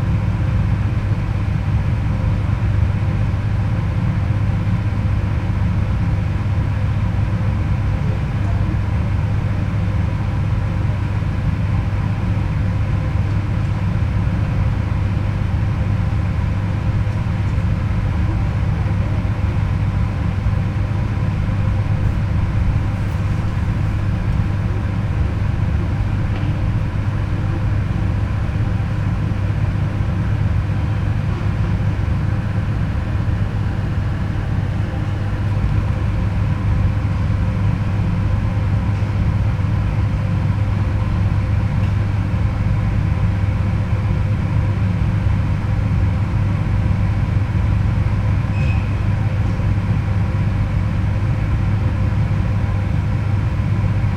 Colombarium ventilation 2
Fête des Morts
Cimetière du Père Lachaise - Paris
Ventilation, grille murale opposée